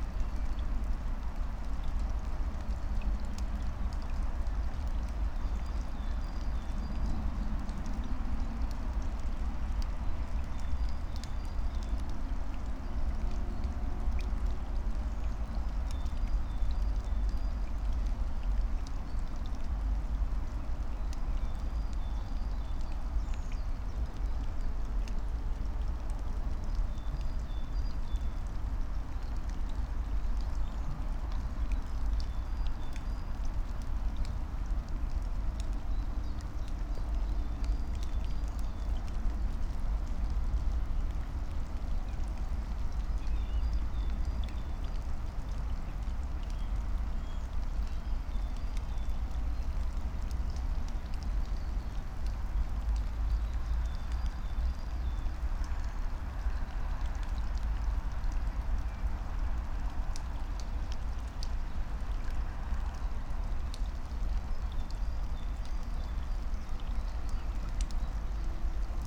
14:46 Berlin Buch, Lietzengraben - wetland ambience
Deutschland, 14 April 2022